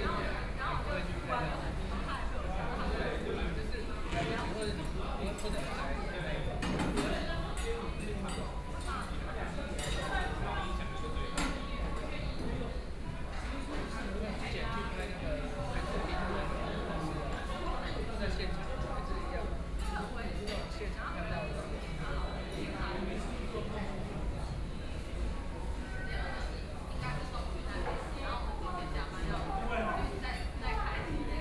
Yonghe District, New Taipei City - In the restaurant
In the restaurant, Binaural recordings, Zoom H6+ Soundman OKM II
New Taipei City, Taiwan, 24 December 2013